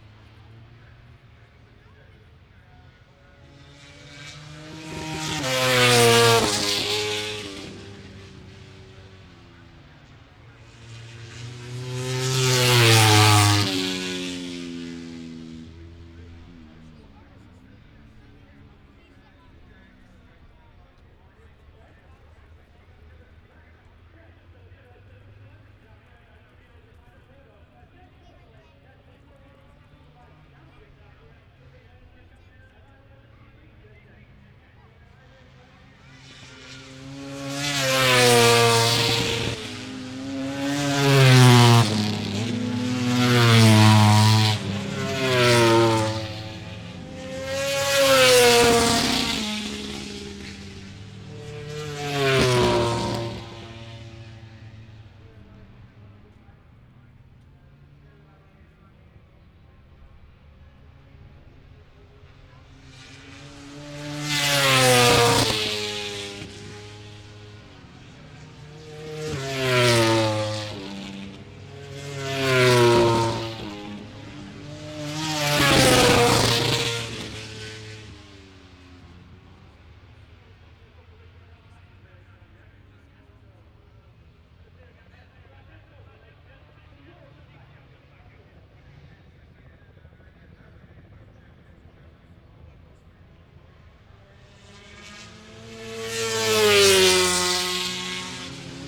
british motorcycle grand prix 2005 ... motogp qualifying ... one point stereo mic ... audio technica ... to minidisk ...